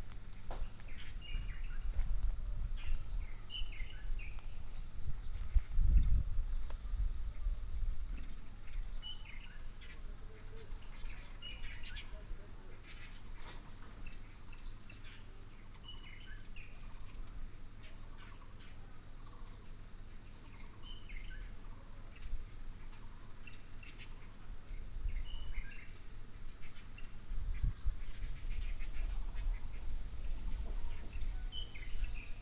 東源路, Mudan Township, Pingtung County - Beside the village street
Bird song, Beside the village street, traffic sound
Binaural recordings, Sony PCM D100+ Soundman OKM II